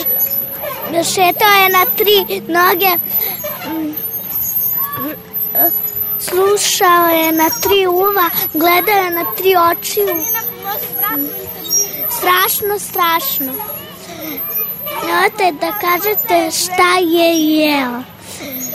Zemun, (Children's poem) Belgrade - Decija pesmica (Childern's poem)